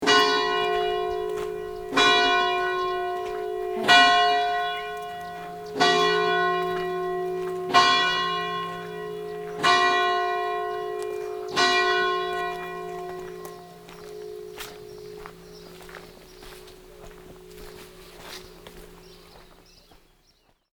regular repetition of the hour bell
soundmap international: social ambiences/ listen to the people in & outdoor topographic field recordings
castello